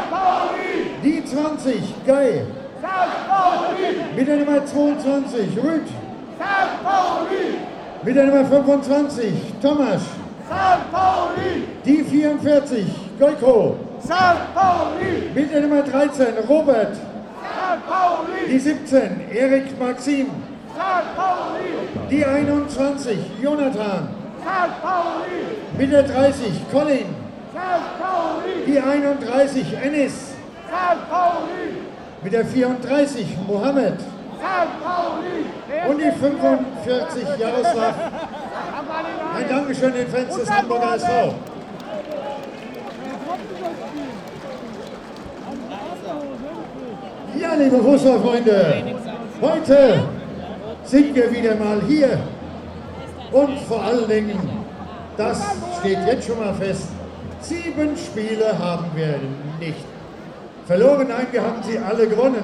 mainz: stadion am bruchweg - the city, the country & me: football stadium of fsv mainz 05, south stands
before the football match mainz 05 - hamburger sv, footbal fans of mainz 05, stadium commentator introducing the teams of hamburger sv and mainz 05
the city, the country & me: october 16, 2010
Mainz, Deutschland, 16 October 2010